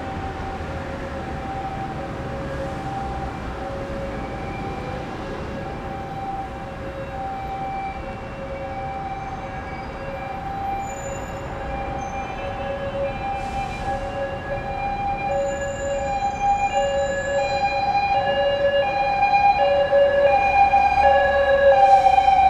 Sec., Zhongxiao E. Rd., Da’an Dist., Taipei City - Sound of an ambulance
In the street, Traffic Sound, Sound of an ambulance
Zoom H4n + Rode NT4